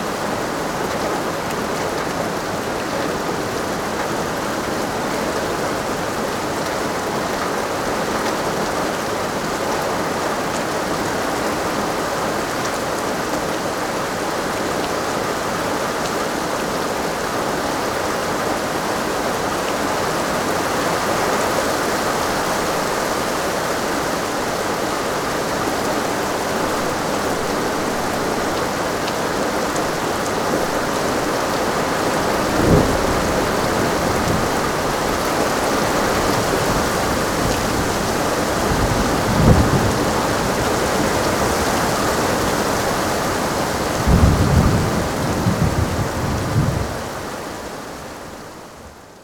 from/behind window, Novigrad, Croatia - morning rain, seaside window